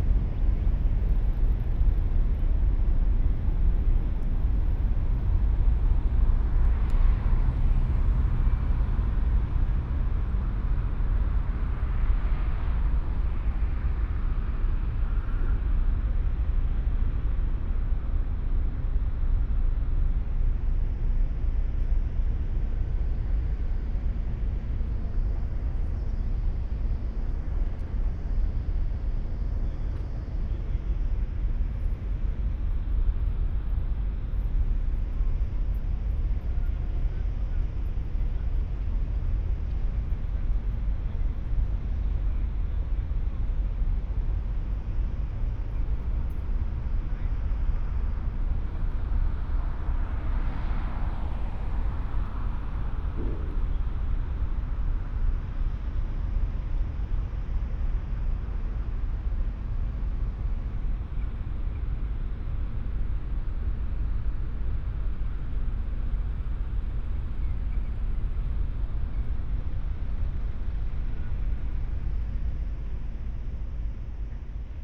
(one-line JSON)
{"title": "Athen, Piräus, harbour - ship horn and drone of leaving cruise liner", "date": "2016-04-05 21:20:00", "description": "two cruise liners blow their horns, while one is leaving the harbour at Piraeus. Deep drone of diesel engines, city hum.\n(Sony PCM D50, Primo EM172)", "latitude": "37.95", "longitude": "23.64", "altitude": "2", "timezone": "Europe/Athens"}